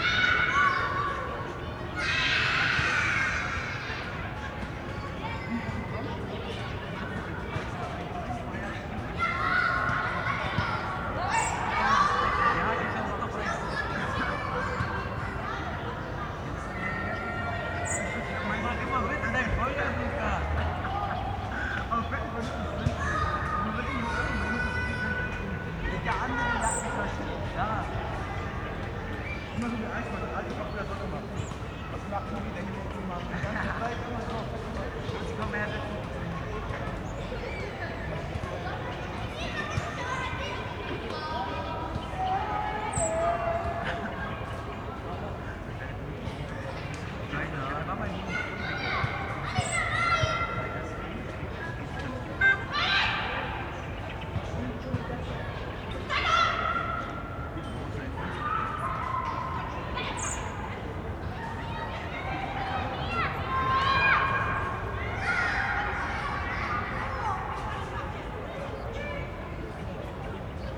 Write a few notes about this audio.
Sunday afternoon, Equinox, first autumn day. ambience at Gropishaus, lots of echoing sounds from in and outside. (SD702, Audio Technica BP4025)